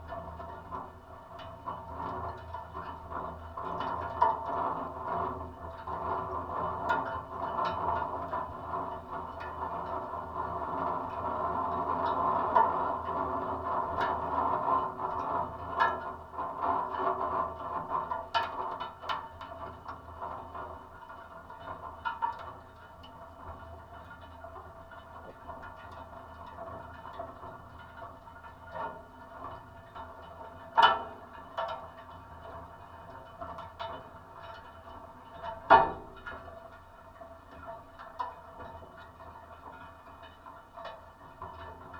Netzow, Templin, Deutschland - iron furnace at work (contact)
(Sony PCM D50, DIY stereo contact mics)
Templin, Germany